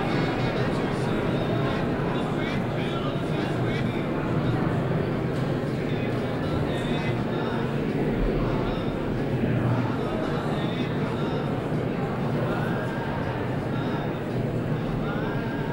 basel, dreispitz, shift festival, ausstellungshalle
soundmap international
social ambiences/ listen to the people - in & outdoor nearfield recordings